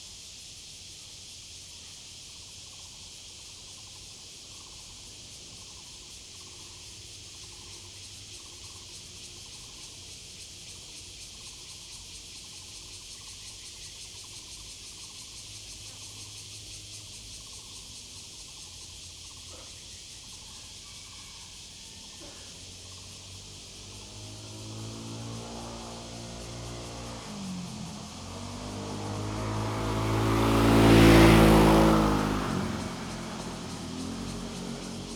羊稠坑 Yangchoukeng, Luzhu Dist. - For high - speed rail track
For high - speed rail track, Cicada and birds sound, Dog, Chicken cry, The train runs through
Zoom H2n MS+XY
Taoyuan City, Taiwan, 27 July, 07:54